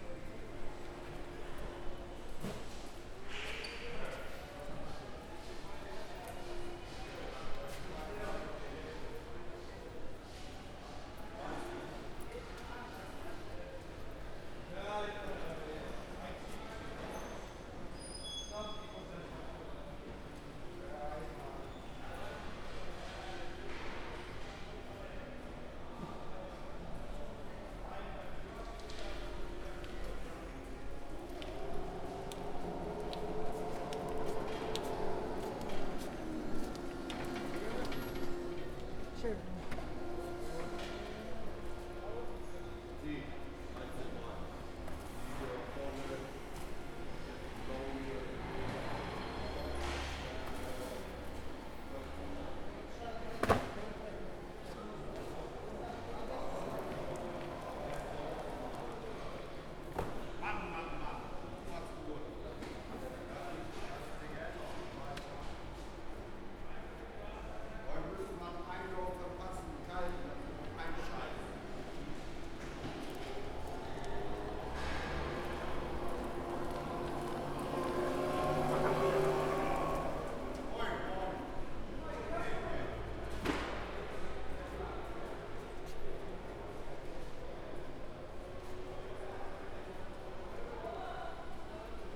Bremen, vegetable/fruit market
the halls were almost empty at that time as most business is done much earlier. people were packing their goods and cleaning was being done
Bremen, Germany